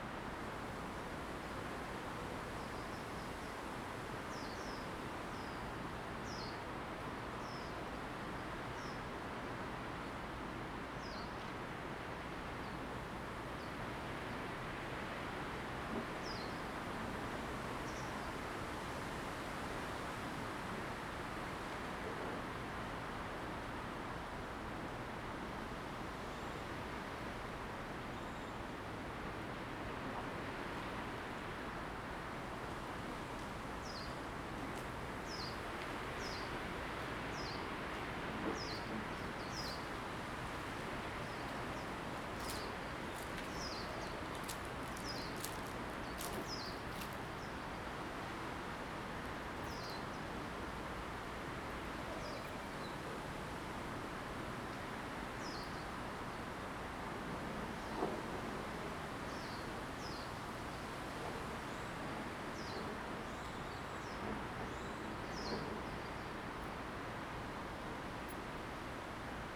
古寧頭戰史館, Jinning Township - In the woods
Birds singing, Wind, In the woods
Zoom H2n MS+XY
3 November 2014, 08:28